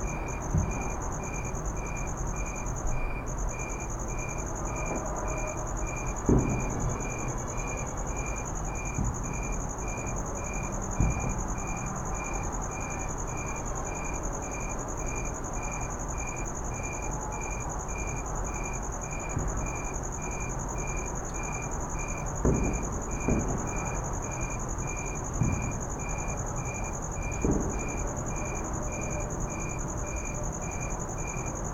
Emerald Dove Dr, Santa Clarita, CA, USA - 4th of July ambience
Several minutes of firework ambience from afar. A few closely explosions, but most softly in the distance.
California, United States of America, 4 July 2020, 21:01